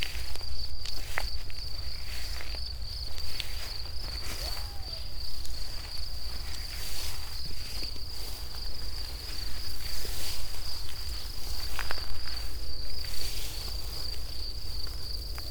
path of seasons, june meadow, piramida - almost full moon rising
above the trees, grass is getting dry and almost all seeds flew already on their seasonal path
11 June 2014, 21:03